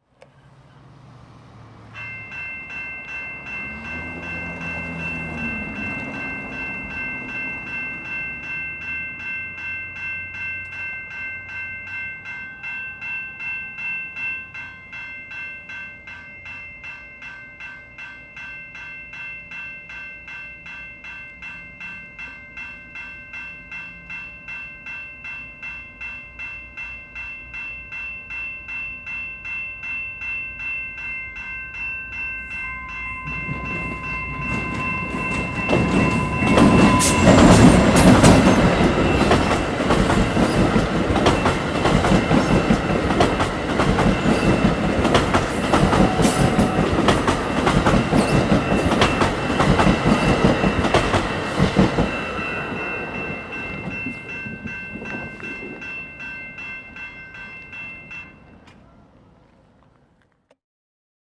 Sainte-Thérèse, QC, Canada - Heading for Montreal
Right beside the track. Recorder: Zoom H2N, dead cat, 4 channel mode. I was standing about 12 feet from the track.